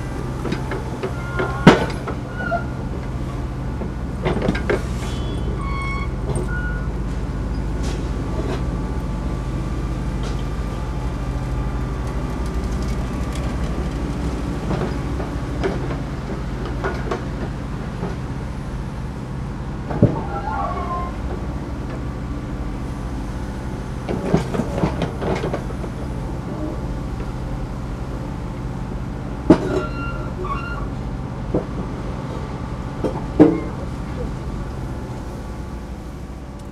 Berlin: Vermessungspunkt Maybachufer / Bürknerstraße - Klangvermessung Kreuzkölln ::: 20.09.2013 ::: 13:22